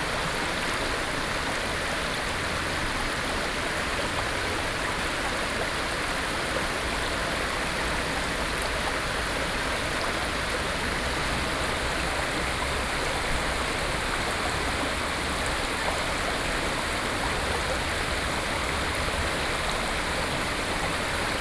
Linquan Lane, Taipei - the streams